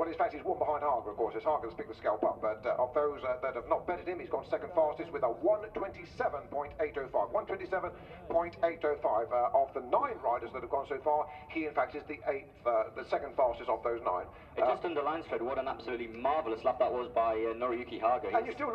Silverstone Circuit, Towcester, UK - world superbikes 2005 ... super pole ...
world superbikes 2005 ... superpole ... one point stereo mic to sony minidisk ... plus commentary ...
May 2005